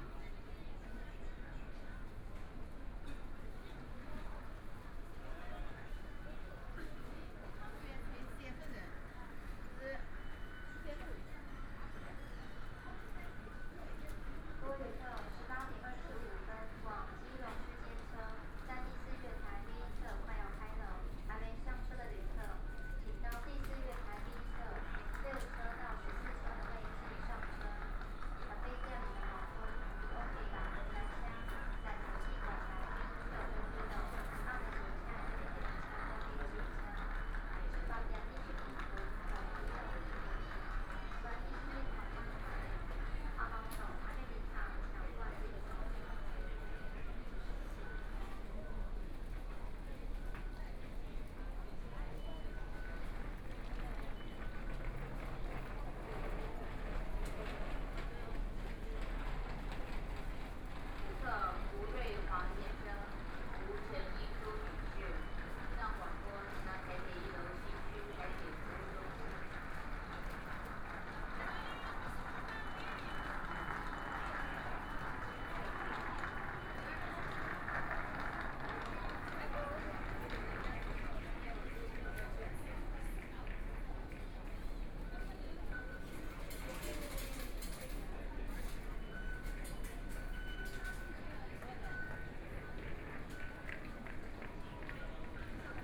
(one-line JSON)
{"title": "Taipei, Taiwan - Return home", "date": "2014-01-30 18:34:00", "description": "Traditional New Year, A lot of people ready to go home, Taipei Main Station, MRT station entrances, Messages broadcast station, Zoom H4n+ Soundman OKM II", "latitude": "25.05", "longitude": "121.52", "altitude": "29", "timezone": "Asia/Taipei"}